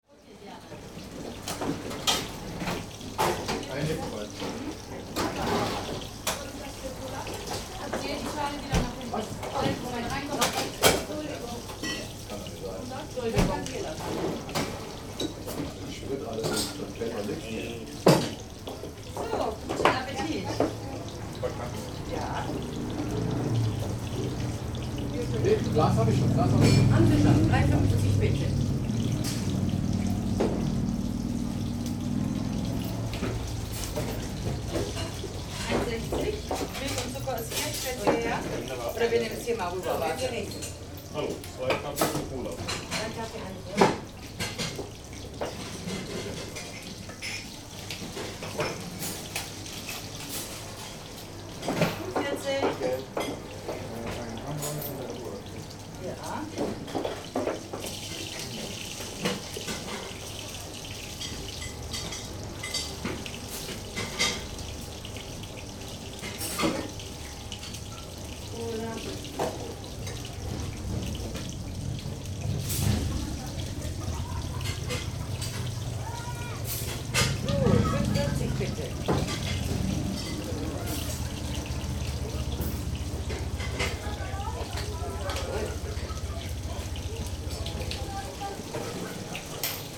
Schönenberg - Motorradtreff, Imbiss / bikers diner
21.05.2009 currywurst, pommes, mayo, senf, burger, cola, kaffee - reger betrieb in der raststätte / busy resting place
Schönenberg, Ruppichteroth, Germany, 21 May 2009, ~14:00